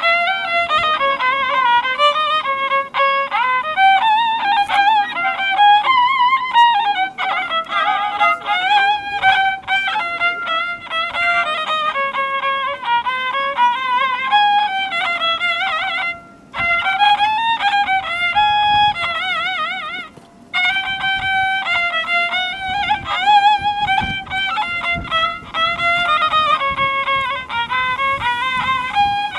Meir, Antwerpen, Belgique - Musicien de rue - Street musician
Musicien Roumain jouant d'un violon reconstitué à partir d'un ancien manche de violon, d'un reproducteur de gramophone pour capter le son lui même raccordé sur une corne de trompette.
Voici l’étonnant résultant.
Romanian musician playing a violin reconstituted from an old violin neck, a gramophone player to capture the sound itself connected to a horn trumpet.
Here is the amazing result.
Antwerpen, Belgium, 12 September 2018